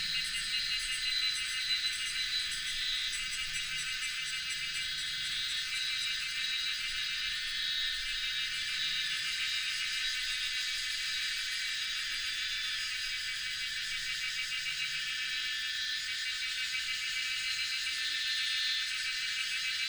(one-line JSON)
{"title": "三角崙, 埔里鎮桃米里 - Cicadas cry", "date": "2016-07-12 17:47:00", "description": "Cicadas cry\nBinaural recordings\nSony PCM D100+ Soundman OKM II", "latitude": "23.93", "longitude": "120.90", "altitude": "753", "timezone": "Asia/Taipei"}